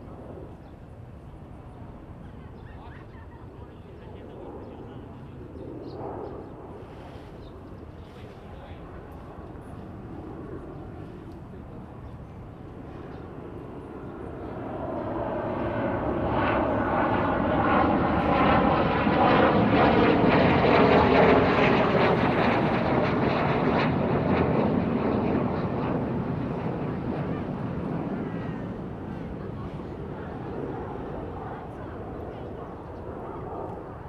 大同路海濱公園, Taitung City, Taitung County - Square in the park
Square in the park, Fighter flying past, Traffic sound, Birdsong, Tourist
Zoom H2n MS +XY
臺東縣, 臺灣, 18 December 2020